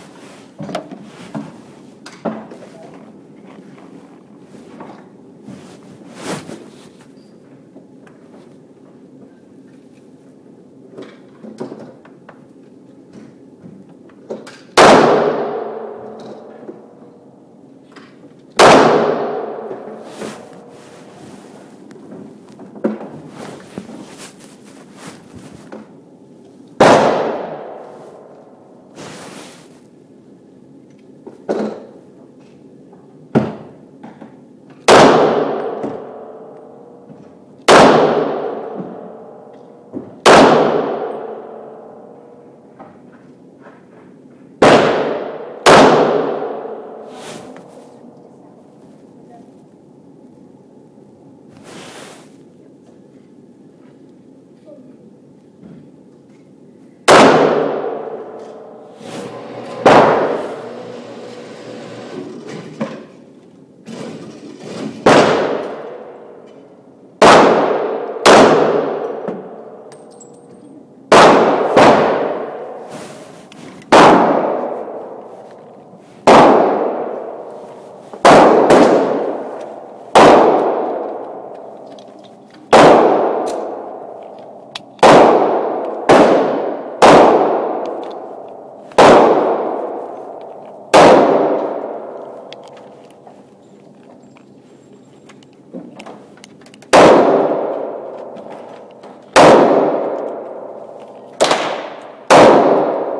San Rafael, CA, USA - Shooting Range on Super Bowl Sunday
Various handguns being shot in an indoor shooting range, mostly .40 and .45 caliber semi-automatic handguns. There were 3 or 4 shooting lanes in use at the time, so there is a lot of overlap of the various guns.